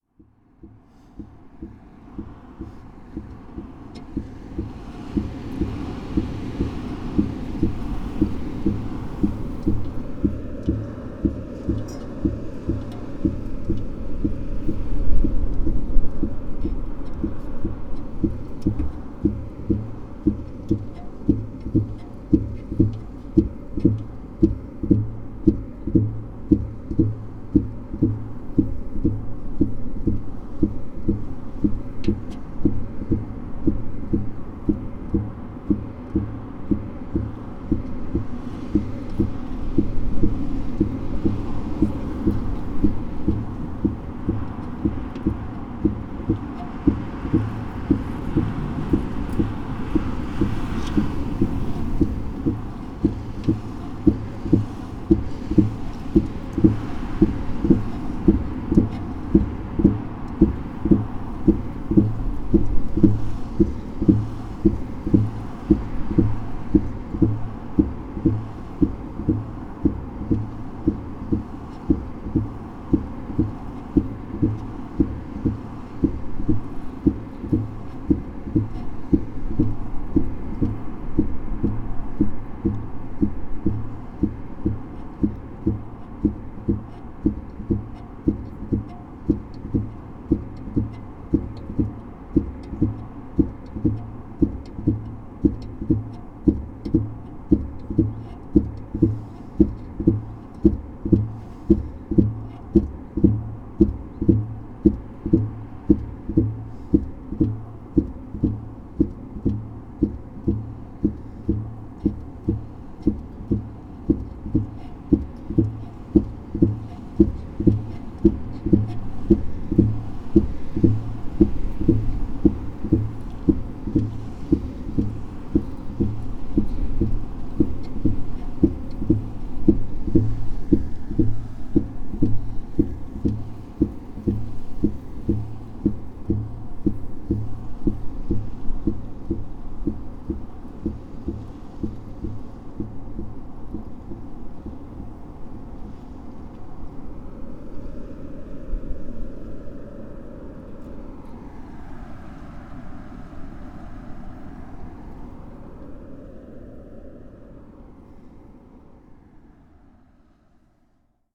quarry, Marušići, Croatia - void voices - stony chambers of exploitation - reflector, broken, trapped between
time moves slow here, it seems everything is the same, only seasons circulate and different winds blow around, but this time change is radical, reflector has fallen, found it trapped between blocks of stone on the floor